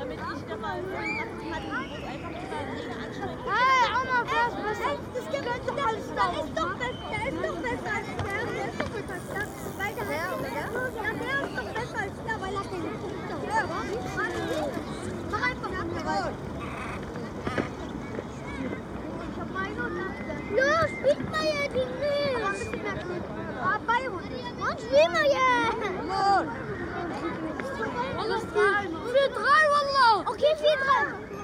Neukölln, Berlin, Deutschland - Berlin. Tempelhofer Feld
Standort: Auf der ehmaligen Start- und Landebahn, östliches Ende. Blick Richtung Nordwest.
Kurzbeschreibung: Fußballspielende Kinder, Passanten im Gespräch, Radfahrer, Wind.
Field Recording für die Publikation von Gerhard Paul, Ralph Schock (Hg.) (2013): Sound des Jahrhunderts. Geräusche, Töne, Stimmen - 1889 bis heute (Buch, DVD). Bonn: Bundeszentrale für politische Bildung. ISBN: 978-3-8389-7096-7
Berlin, Germany, 27 April 2012, 17:30